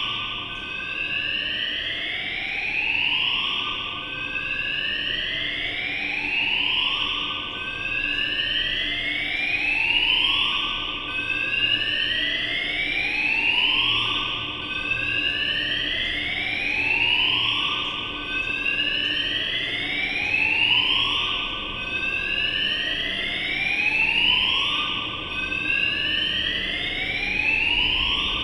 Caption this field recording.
A fire alarm rings in an underground car park, causing an hurly-burly.